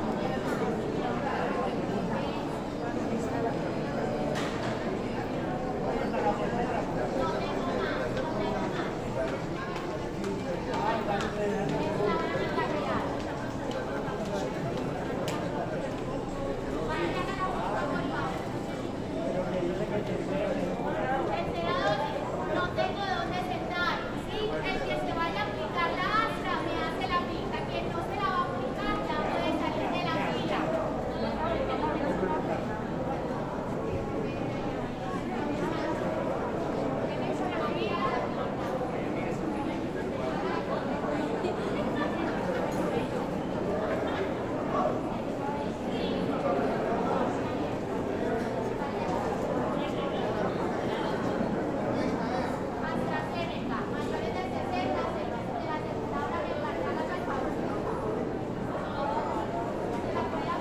Cl., Medellín, Antioquia, Colombia - Parqueadero Los Molinos
Puesto de vacunación en el parqueadero.
Sonido tónico: Enfermera dando comunicado, personas hablando.
Señal sonora: Pasos, risas.
Se grabó con el micrófono de un celular.
Tatiana Flórez Ríos- Tatiana Martinez Ospino - Vanessa Zapata Zapata
10 November